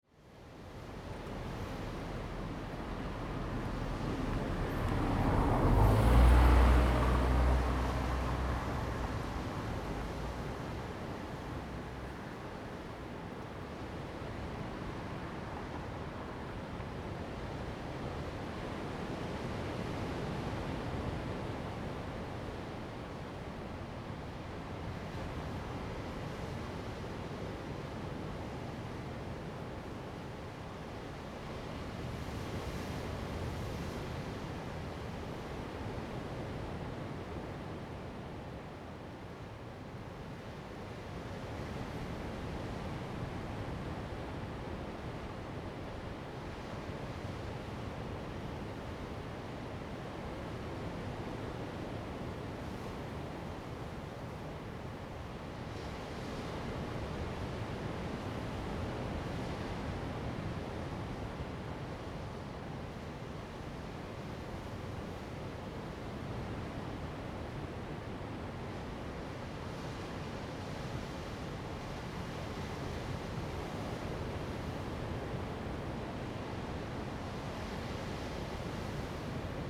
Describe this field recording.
behind the rock, sound of the waves, Zoom H2n MS +XY